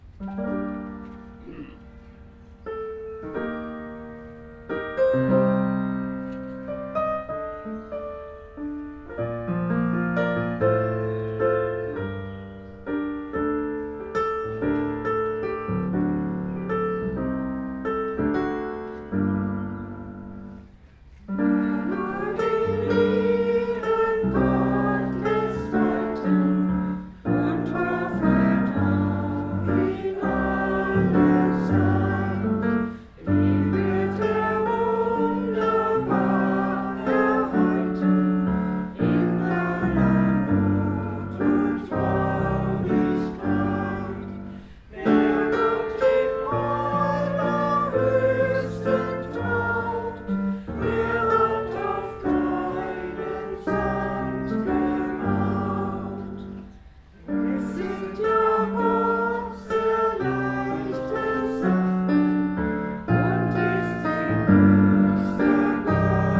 Gießen, Deutschland - andachtslied Lichtkirche
The congregation sings. A potpourri of accidental guests and interested church visitors. Piano is played by a Korean looking church dekan. Recorded with ZoomH4N
Giessen, Germany, 13 June 2014